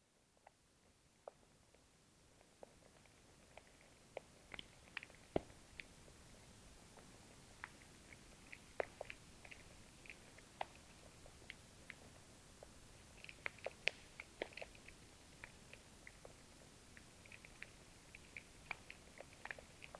Quillebeuf-sur-Seine, France - Microcosmos
The minuscule life of a pond, recorded with a contact microphone, buried directly into the silt. Small animals are moving, diging, eating.